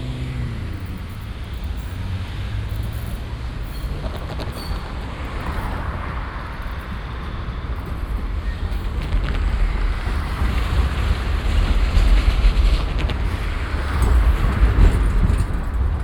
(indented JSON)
{
  "title": "cologne, barbarossaplatz, verkehrszufluss pfälzer strasse",
  "date": "2008-09-29 19:31:00",
  "description": "strassen- und bahnverkehr am stärksten befahrenen platz von köln - aufname morgens\nsoundmap nrw:",
  "latitude": "50.93",
  "longitude": "6.94",
  "altitude": "55",
  "timezone": "Europe/Berlin"
}